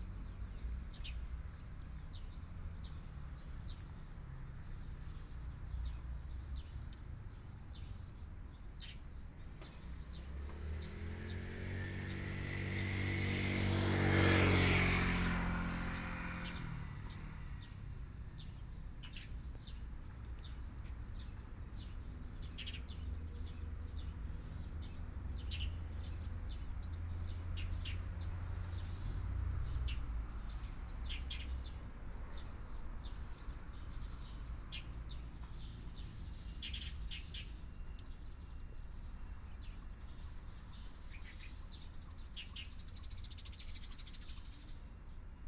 In the temple plaza, Traffic Sound, Birds
Sony PCM D50+ Soundman OKM II